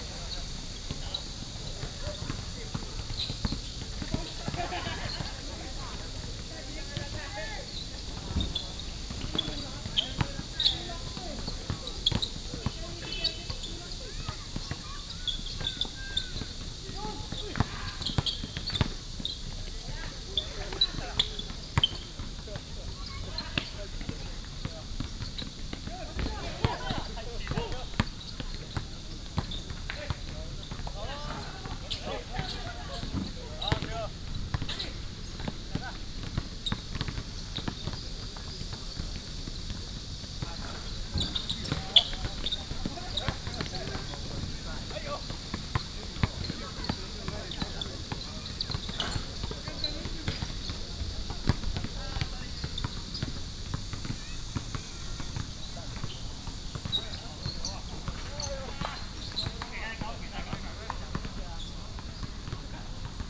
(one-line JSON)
{"title": "陽明運動公園, Taoyuan City - play basketball", "date": "2017-07-15 19:00:00", "description": "Next to the basketball court, Cicada", "latitude": "24.98", "longitude": "121.31", "altitude": "108", "timezone": "Asia/Taipei"}